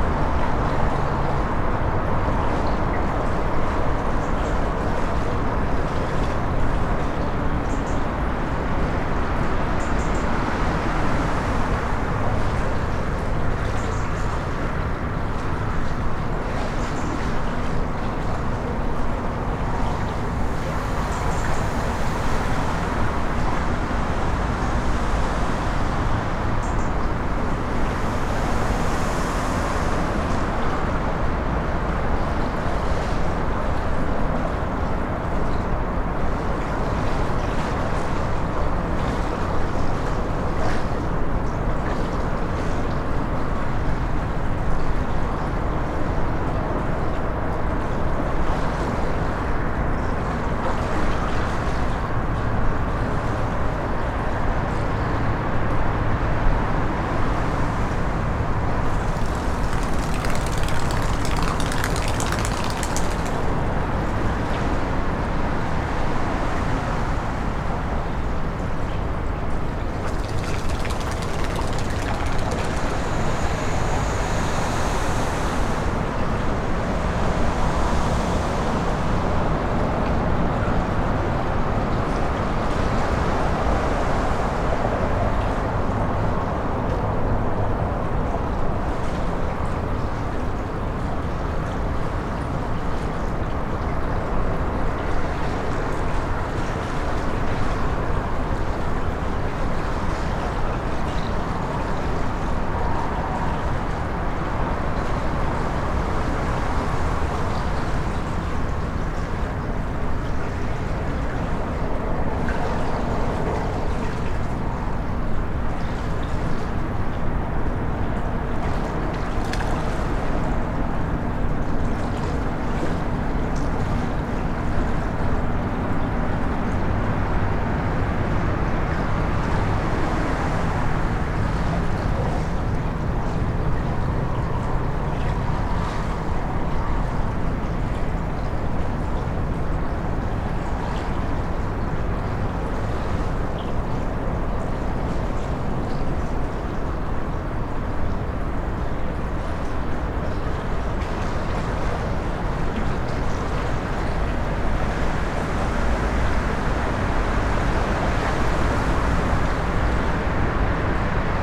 Pont de Jons, under the bridge
Swirl, a duck taking off at 3.
SD-702, Me-64 ORTF.
25 December 2011, ~17:00